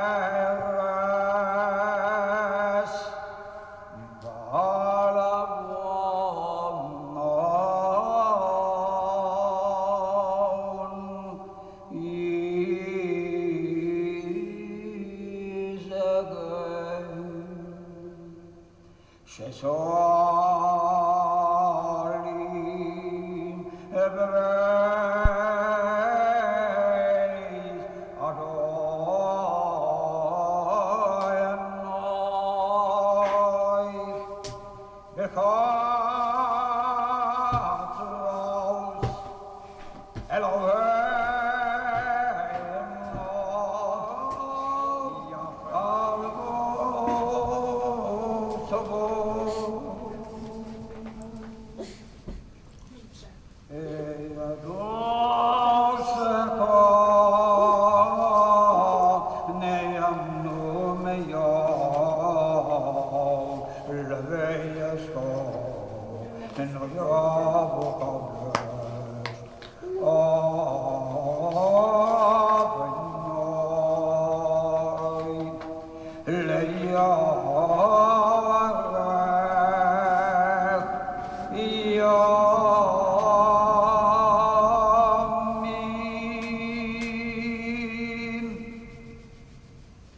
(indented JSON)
{
  "title": "Spanish Synagogue, jeruzalemska street",
  "date": "2000-10-07 13:50:00",
  "description": "Cantor of the Brno Jewish community Arnošt Neufeld sings service in the Spanish Synagogue in Prague",
  "latitude": "50.08",
  "longitude": "14.43",
  "altitude": "209",
  "timezone": "Europe/Prague"
}